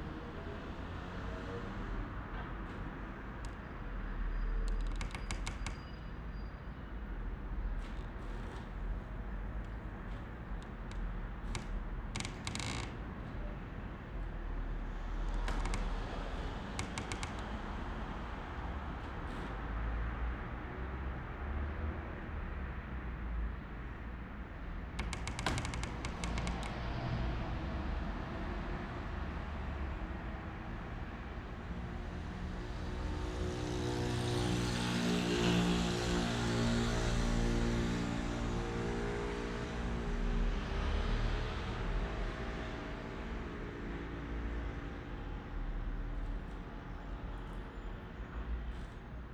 old casino, Glavni Trg, Maribor - room ambience, traffic waves, squeaking windows
listening to waves of traffic around Glavni Trg, various squeaking windows, inside a former casino, 1st floor. The building is used as a Cafe and project space.
(SD702, Audio Technica BP4025)
Maribor, Slovenia